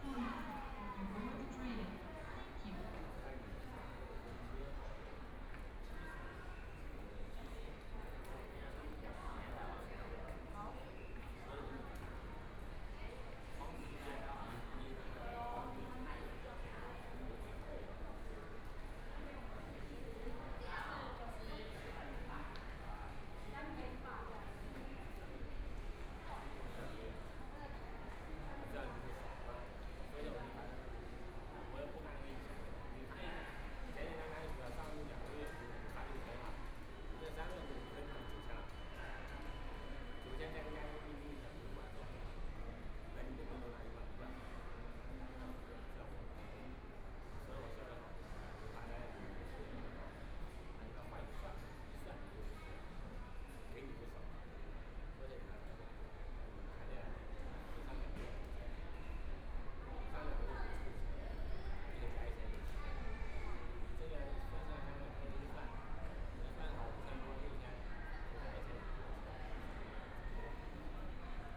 ChiayiStation, THSR - In the station lobby
In the station lobby, Binaural recordings, Zoom H4n+ Soundman OKM II